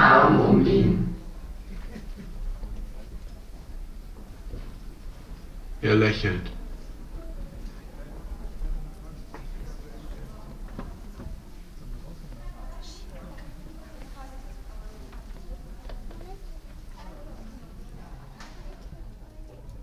{
  "title": "cologne, filzengraben, khm, cinema",
  "date": "2008-07-17 14:06:00",
  "description": "kinovorführung an der Kunsthochschule für Medien (KHM) originalton- ausschnitt\nsoundmap nrw: social ambiences/ listen to the people - in & outdoor nearfield recordings",
  "latitude": "50.93",
  "longitude": "6.96",
  "altitude": "51",
  "timezone": "Europe/Berlin"
}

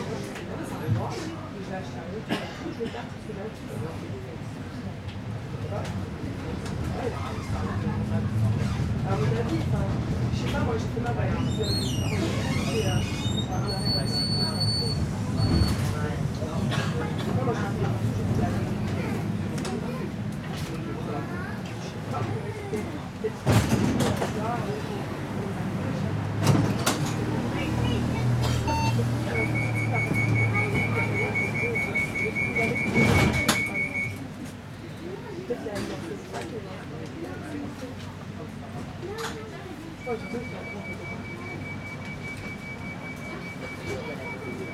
{
  "title": "Tram, Bruxelles, Belgique - Tram 92 between Poelaert and Faider",
  "date": "2022-05-25 14:45:00",
  "description": "Modern Tram.\nTech Note : Olympus LS5 internal microphones.",
  "latitude": "50.83",
  "longitude": "4.36",
  "altitude": "73",
  "timezone": "Europe/Brussels"
}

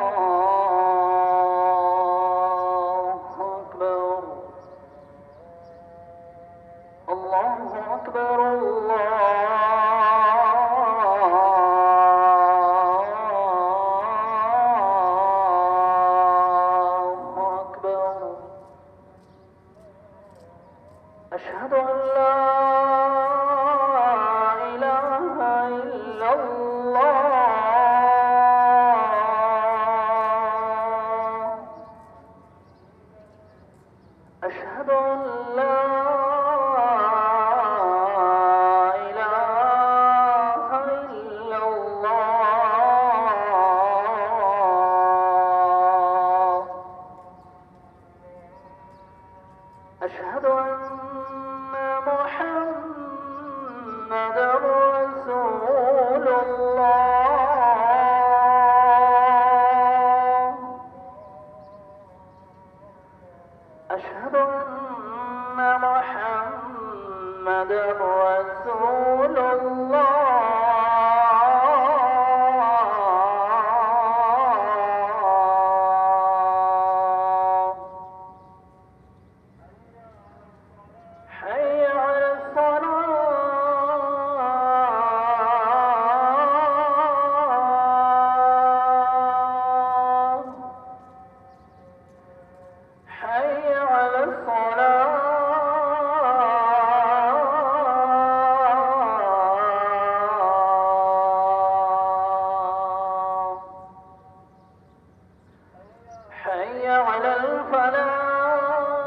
{
  "title": "Askar, Bahreïn - Mosquée Asker South - Askar - Bahrain",
  "date": "2021-05-29 18:21:00",
  "description": "Appel à la prière de 18h21 - Mosquée Asker South - Askar - Bahrain",
  "latitude": "26.06",
  "longitude": "50.62",
  "altitude": "3",
  "timezone": "Asia/Bahrain"
}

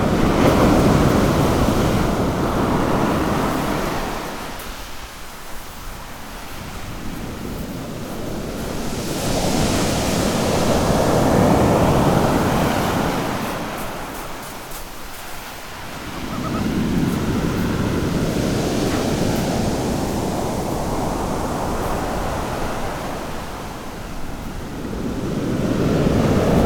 {
  "title": "milazzo, beach - waves and stormy weather",
  "date": "2009-10-18 14:30:00",
  "description": "the sea after a stormy night, after a big wave, the recordist hits the ground",
  "latitude": "38.23",
  "longitude": "15.24",
  "altitude": "4",
  "timezone": "Europe/Berlin"
}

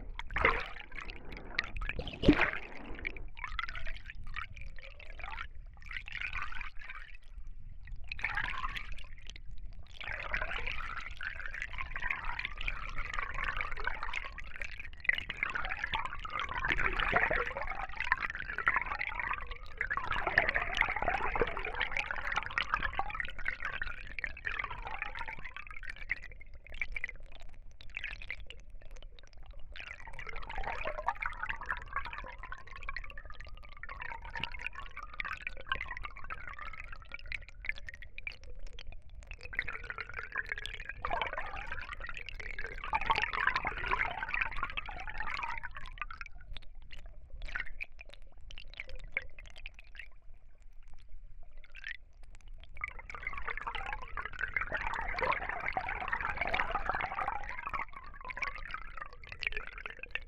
{
  "title": "Filotas, Greece - Hydrophone",
  "date": "2022-01-20 12:13:00",
  "description": "Record by: Alexandros Hadjitimotheou",
  "latitude": "40.65",
  "longitude": "21.73",
  "altitude": "538",
  "timezone": "Europe/Athens"
}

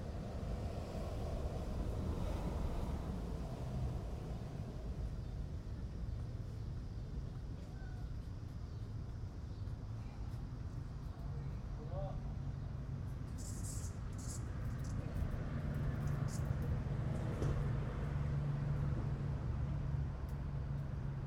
{
  "title": "Portage Park, Chicago, IL, USA - Summer evening soundscape in Jefferson Park, Chicago",
  "date": "2012-07-22 20:50:00",
  "description": "Cicadas, traffic, planes and kids are audible in this summer evening soundscape from the Jefferson Park neighborhood, Chicago, Illinois, USA.\n2 x Audio Technica AT3031, Sound Devices 302, Tascam DR-40.",
  "latitude": "41.97",
  "longitude": "-87.77",
  "altitude": "188",
  "timezone": "America/Chicago"
}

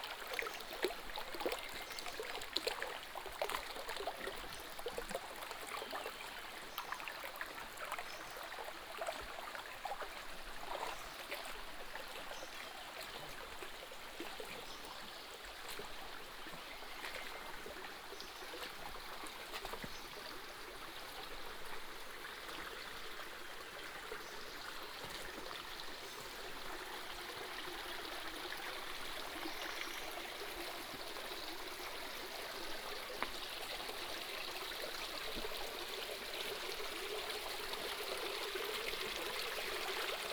{
  "title": "成功里, Puli Township, Nantou County - Walking along the river",
  "date": "2016-04-20 15:09:00",
  "description": "Walking along the river",
  "latitude": "23.96",
  "longitude": "120.89",
  "altitude": "454",
  "timezone": "Asia/Taipei"
}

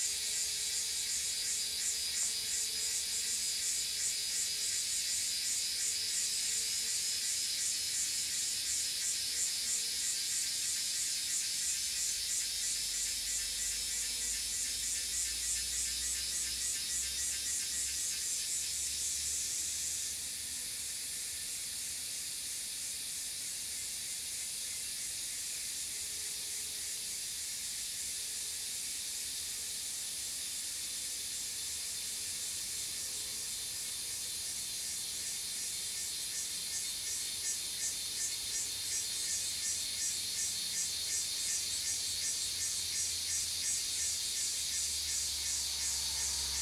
{
  "title": "草楠, 桃米里Puli Township - Cicada and traffic sounds",
  "date": "2016-06-07 09:59:00",
  "description": "Cicada sounds, traffic sounds\nZoom H2n MS+XY",
  "latitude": "23.95",
  "longitude": "120.91",
  "altitude": "598",
  "timezone": "Asia/Taipei"
}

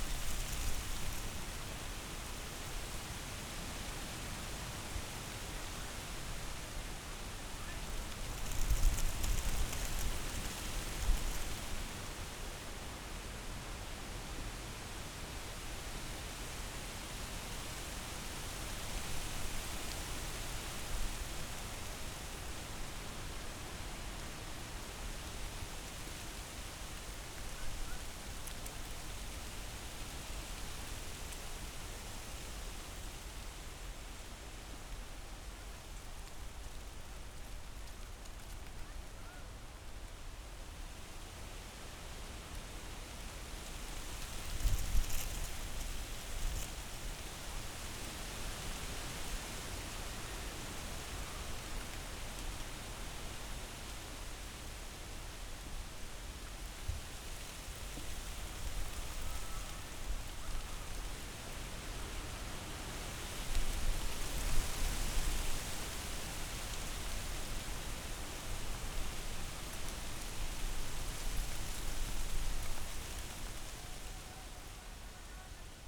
{
  "title": "Tempelhofer Feld, Berlin, Deutschland - mid autumn, light wind",
  "date": "2018-10-27 13:45:00",
  "description": "place revisited, end of October. Crows start to gather in the hundreds (can't be heard in this recording...)\n(Sony PCM D50, DPA4060)",
  "latitude": "52.48",
  "longitude": "13.40",
  "altitude": "42",
  "timezone": "Europe/Berlin"
}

